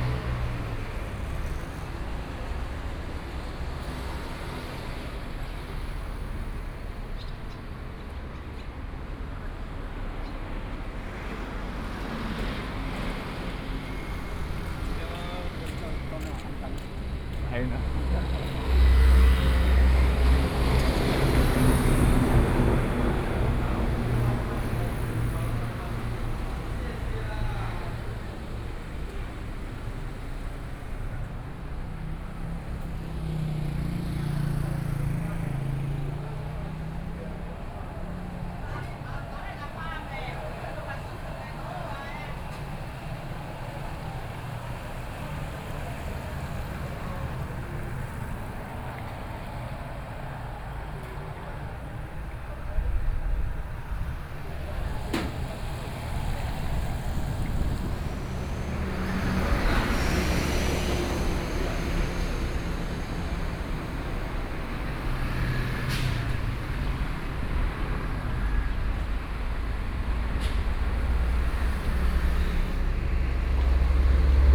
Sec, Yuanshan Rd., Yuanshan Township - walking on the Road
walking on the Road, Traffic Sound, Various shops sound
Sony PCM D50+ Soundman OKM II
Yuanshan Township, Yilan County, Taiwan